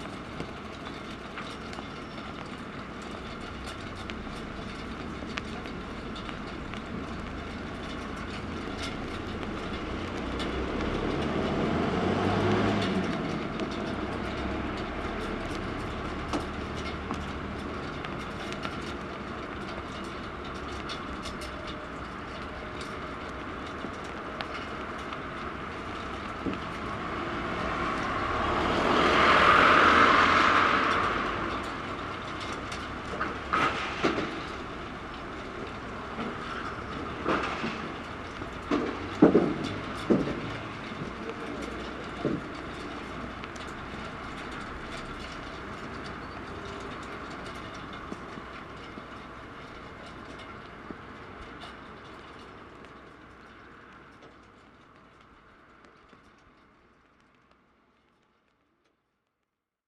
Leipzig-Halle Cargo Airport
cargo airport, Leipzig, Halle, military cargo flights, Background Listening Post
Schkeuditz, Germany, 11 August 2010